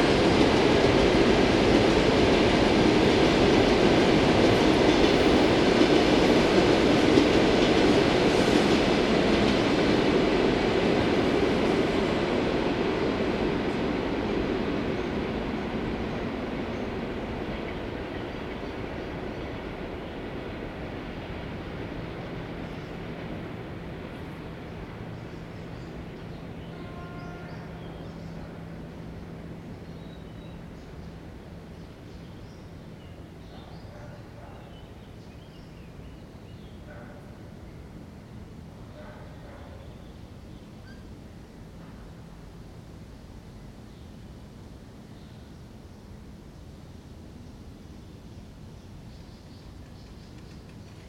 Королёв, Московская обл., Россия - Two suburban electric trains
Forest area near the railway. Two suburban electric trains are moving in opposite directions. The singing of birds and the barking of a dog can be heard.
Recorded with Zoom H2n, surround 2ch mode.